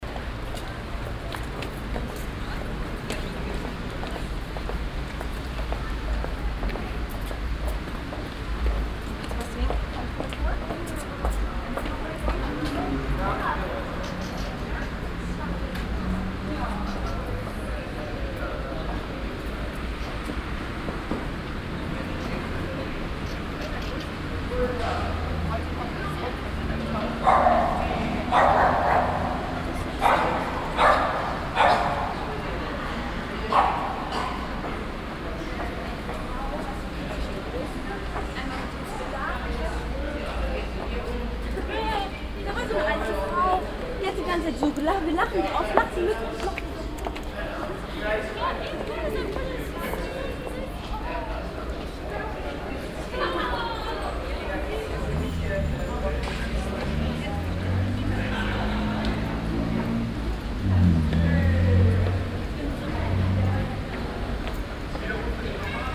soundmap nrw: social ambiences/ listen to the people - in & outdoor nearfield recordings